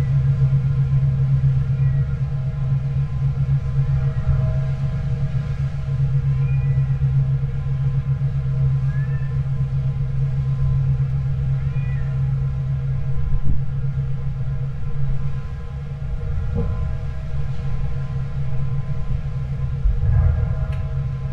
가파도 (Gapa-do) is a very small island south of Jeju-do...it is very low lying and exposed to the elements...the clay urns are used for fermenting foods such as kimchi and for making Magkeolli (rice wine) and are to be found at most households throughout Korea...
Seogwipo, Jeju-do, South Korea, 14 December